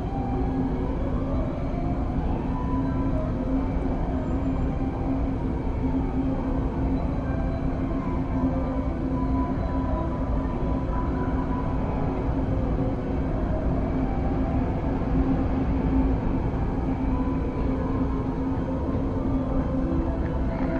112台灣台北市北投區學園路1號國立臺北藝術大學圖書館 - the sound around the pond
the sound inside the bottle